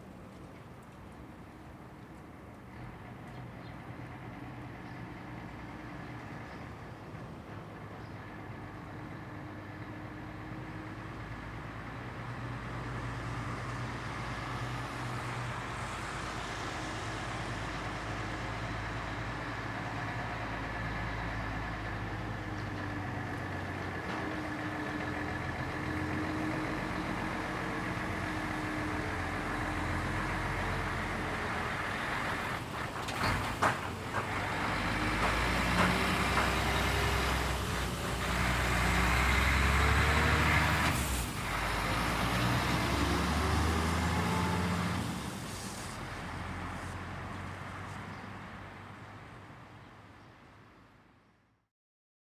Chatelaine over the bridge, Chemin des Sports, Genève, Suisse - Chatelaine Over the Bridge 2
Dans le quartier de Châtelaine sur le pont où passe les trains pour l'aéroport. On On entend les écoliers, le train, les voitures et le chantier à côté.
In the Châtelaine district on the bridge where the trains to the airport pass. We can hear the schoolchildren, the train, the cars and the construction site nearby.
Rec H2n - processed
Schweiz/Suisse/Svizzera/Svizra, 19 January 2021, 11:30